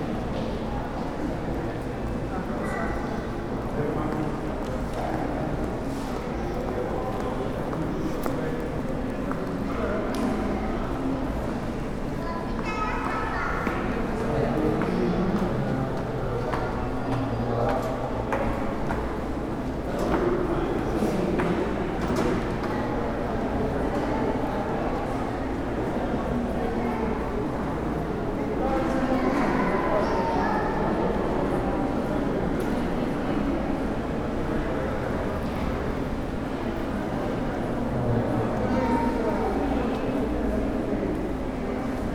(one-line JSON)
{"title": "Benito Juárez, Centro, León, Gto., Mexico - Presidencia municipal. León, Guanajuato. México.", "date": "2022-07-25 13:56:00", "description": "City Hall. Leon, Guanajuato. Mexico.\nI made this recording on july 25th, 2022, at 1:56 p.m.\nI used a Tascam DR-05X with its built-in microphones and a Tascam WS-11 windshield.\nOriginal Recording:\nType: Stereo\nEsta grabación la hice el 25 de julio 2022 a las 13:56 horas.", "latitude": "21.12", "longitude": "-101.68", "altitude": "1807", "timezone": "America/Mexico_City"}